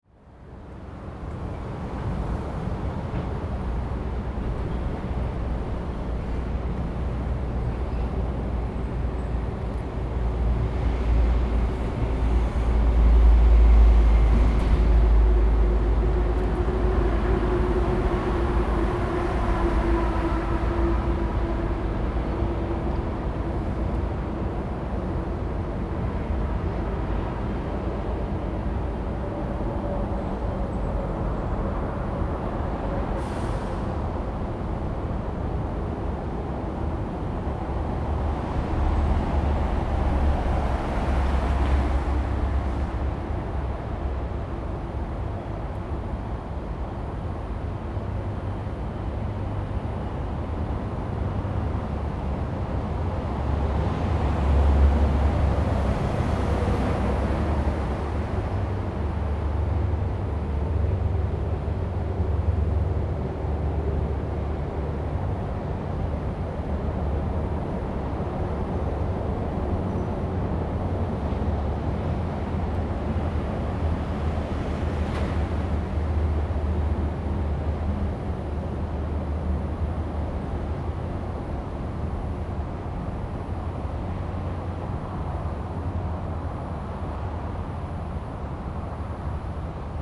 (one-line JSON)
{"title": "光復路二段2巷, East Dist., Hsinchu City - next to the highway", "date": "2017-09-15 04:09:00", "description": "Early in the morning next to the highway, Zoom H2n MS+XY", "latitude": "24.79", "longitude": "121.01", "altitude": "61", "timezone": "Asia/Taipei"}